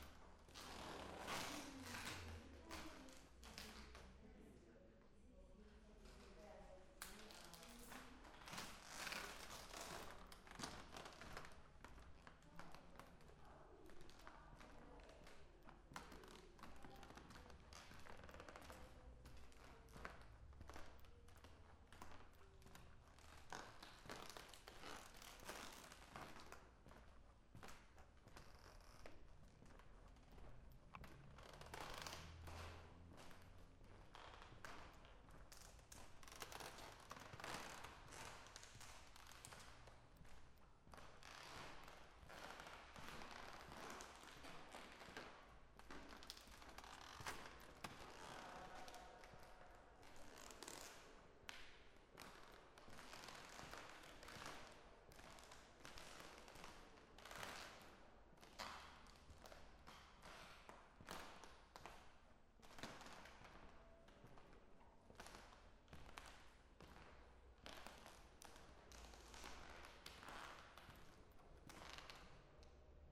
international conference - PS
(1oo years)